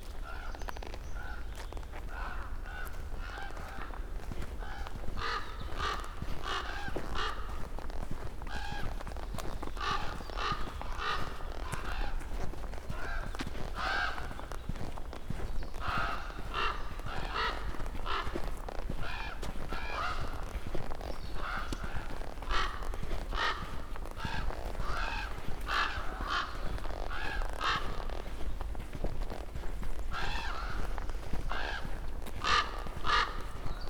Eurovelo, Polska - crows getting away
trying to catch a group of active crows on a forest path. (roland r-07)
11 June, ~17:00